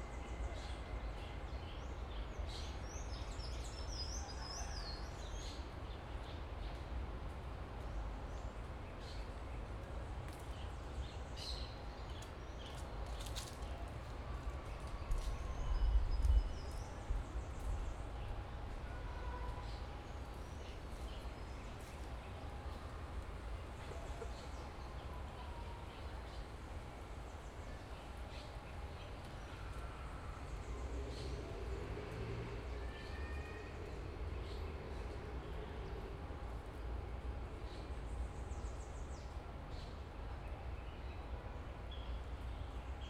O local e uma passagem com pouco fluxo de pessoas e bastante arvores, foi utilizado um gravador tascam dr-40 fixado em um tripé.